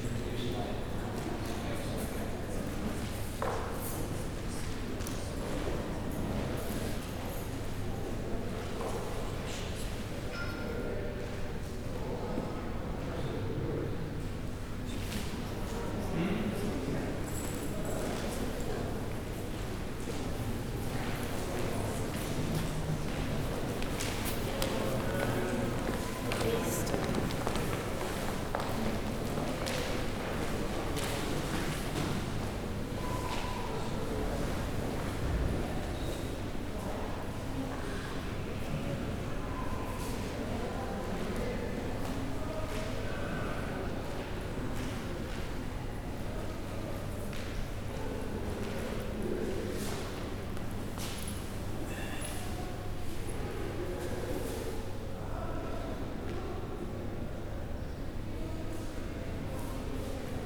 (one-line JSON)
{"title": "Tate Modern, London, UK - The Rothko Room, part of In The Studio, Tate Modern.", "date": "2018-03-26 10:20:00", "description": "The Rothko Room is usually quiet, but on this occasion there were a number of school groups coming in and out of the room.\nRecorded on a Zoom H5.", "latitude": "51.51", "longitude": "-0.10", "altitude": "5", "timezone": "Europe/London"}